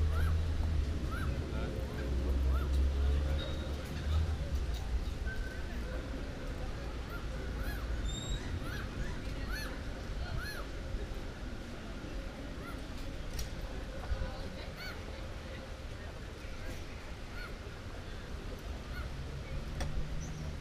A walk through the city The Hague: Binnenhof, Buitenhof, Passage, Spuistraat, Grote Marktstraat with musicians, MediaMarkt.
Binaural recording, some wind.